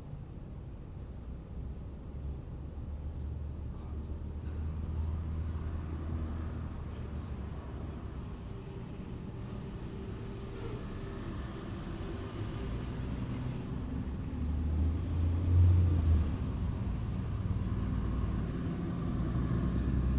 Portage Park, Chicago, IL, USA - Early morning soundscape in Jefferson Park, Chicago
Early morning soundscape in the Jefferson Park neighborhood, Chicago, Illinois, USA, recorded on World Listening Day 2012.
2 x Audio Technica AT3031, Sound Devices 302, Tascam DR-40.
July 2012